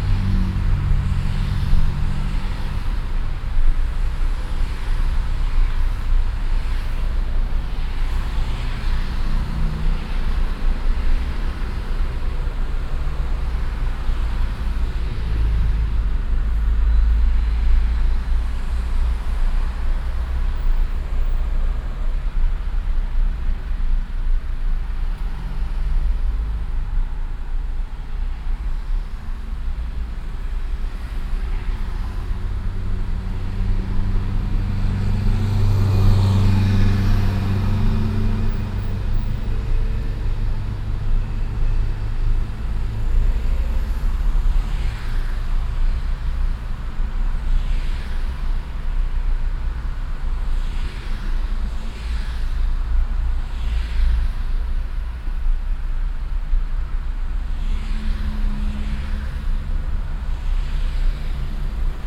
cologne, autobahnabfahrt - innere kanalstrasse, im verkehr
abfahrt von der a 57 in die kölner ampelzone - stehverkehr nachmittags - parallel ausfahrende fahrzeuge
soundmap nrw: social ambiences/ listen to the people - in & outdoor nearfield recordings
autobahnabfahrt a57 - innere kanalstrasse, 27 August